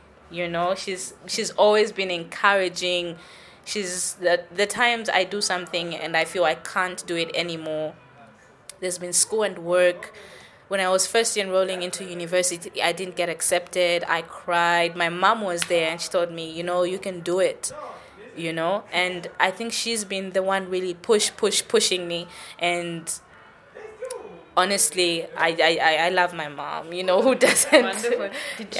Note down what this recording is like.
A popular DJ with Joy FM in Lusaka, Petronella uses her radio platform and popularity among young listeners to raise awareness for African and Zambian culture in her radio show The Dose and dedicated programmes like Poetic Tuesday.